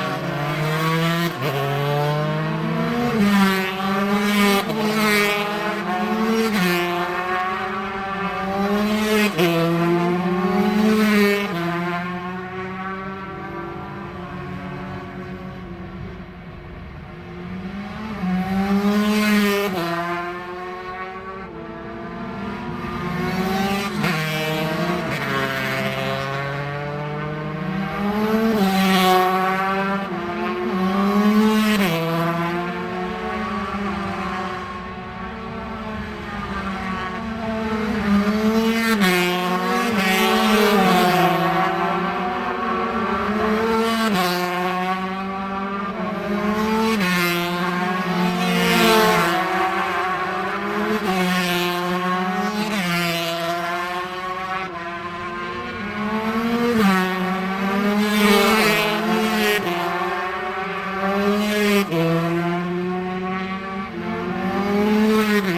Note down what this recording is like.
british superbikes 2002 ... 125 free practice ... mallory park ... one point stereo mic to mini disk ... date correct ... time not ...